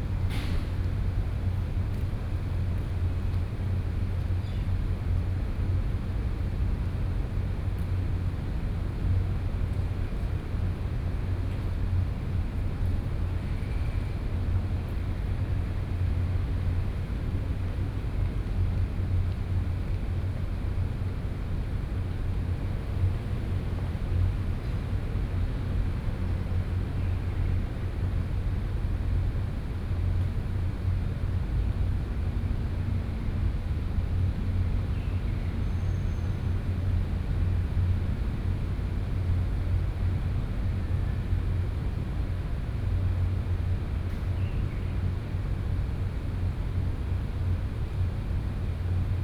Ln., Sec., Bade Rd., Da’an Dist. - Air-conditioning noise
Greenbelt Park, Air-conditioning noise, Hot weather, Bird calls
Da’an District, Taipei City, Taiwan, 18 June 2015, 16:13